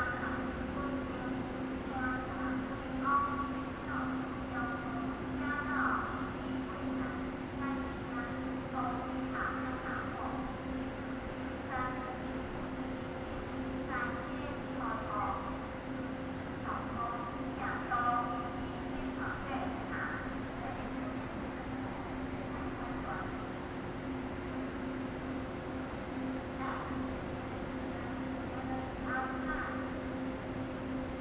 Kaohsiung Station - train
Before starting the train noise, Train traveling through, Sony ECM-MS907, Sony Hi-MD MZ-RH1
高雄市 (Kaohsiung City), 中華民國, February 2012